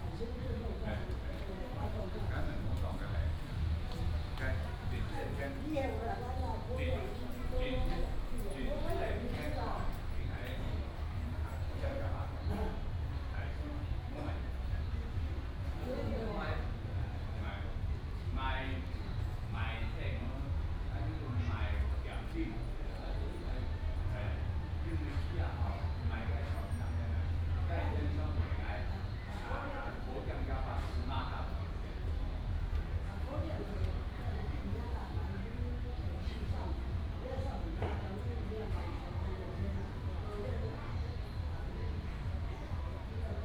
湖口三元宮, Hukou Township - Walking in the temple
Walking in the temple